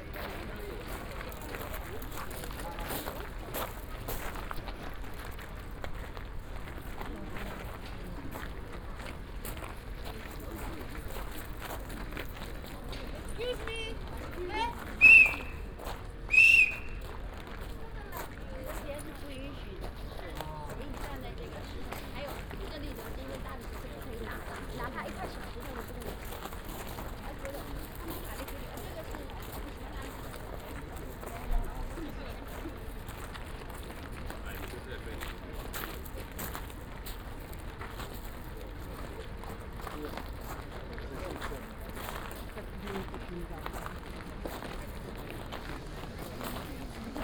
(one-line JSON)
{"title": "Athens, Acropolis - limestone", "date": "2015-11-06 11:30:00", "description": "(binaural) steps of tourists on a crushed limestone make a crunching sound. it's a very distinct sound for the Acropolis. a woman goes over the rope in the restricted area and gets spotted by one of the guards. (sony d50 + luhd pm-01bin)", "latitude": "37.97", "longitude": "23.73", "altitude": "141", "timezone": "Europe/Athens"}